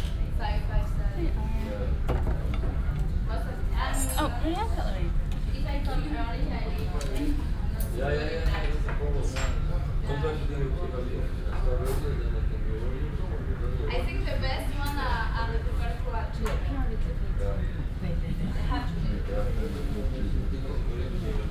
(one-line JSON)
{"title": "vancouver, granville street, hotel, breakfast room", "description": "early in the morning in a hotel breakfast room, bagground music, mellow talking, chairs being moved\nsoundmap international\nsocial ambiences/ listen to the people - in & outdoor nearfield recordings", "latitude": "49.28", "longitude": "-123.13", "altitude": "24", "timezone": "GMT+1"}